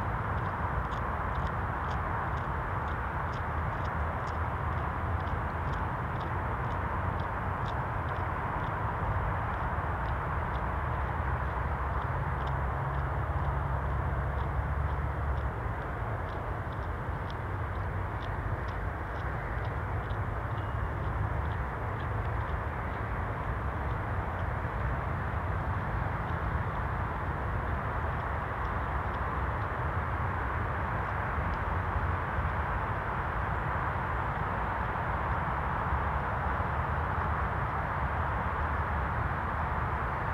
Contención Island Day 9 outer southwest - Walking to the sounds of Contención Island Day 9 Wednesday January 13th
The Drive Moor Place Woodlands Oaklands Avenue Oaklands Grandstand Road High Street Moor Crescent The Drive
A flock of 20 Golden Plovers fly
looping
circling
I lose them as they fly over my head